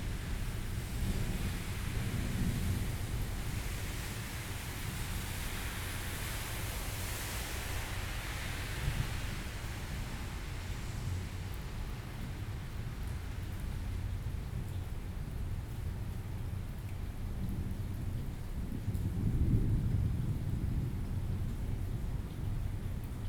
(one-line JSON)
{"title": "tamtamART.Taipei - Thunderstorm", "date": "2013-06-23 16:49:00", "description": "Thunderstorm, Indoor, Near the main door, Microphone placed on the ground, Sony PCM D50 + Soundman OKM II", "latitude": "25.05", "longitude": "121.52", "altitude": "24", "timezone": "Asia/Taipei"}